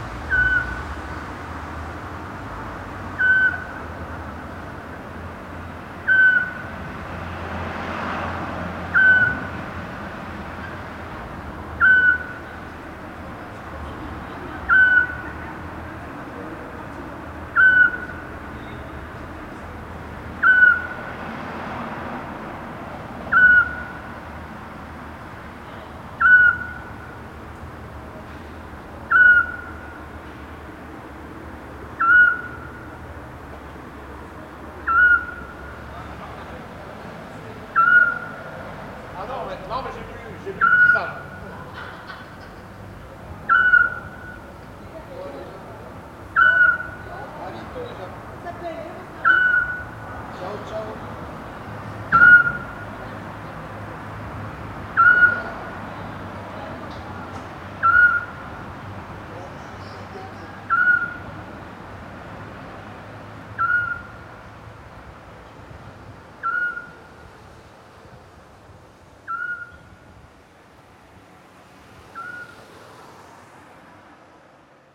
France, Ille, Le petit-duc est dans la place / The scops owl's back to square one - Le petit-duc est dans la place / The scops owl's back to square one
The scops owl is back to the city square and he's in a good shape.
Ille-sur-Têt, France